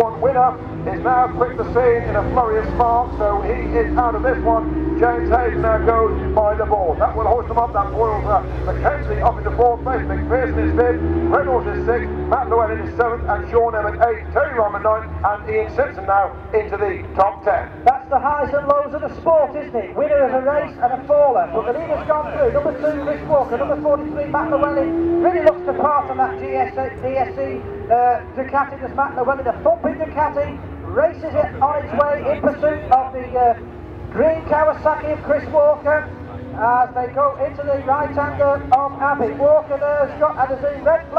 BSB 1998 ... Superbikes ... Race 2 ... commentary ... one point stereo mic to minidisk ... date correct ... time optional ...
England, UK, 6 September 1998, 15:30